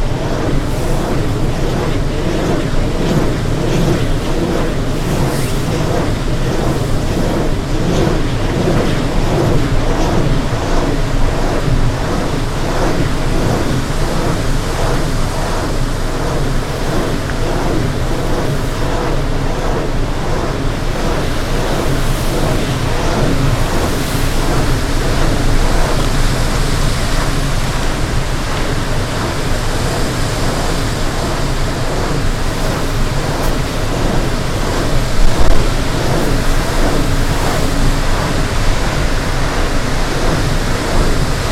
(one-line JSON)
{"title": "VÅRDKASBACKEN, Härnösand, Sverige - by the windmill", "date": "2020-09-18 15:33:00", "description": "Recorded on a windy day at the wind turbine up at Vårdkasen in Härnösand. The recording was made with two omnidirectional microphones", "latitude": "62.61", "longitude": "17.95", "altitude": "134", "timezone": "Europe/Stockholm"}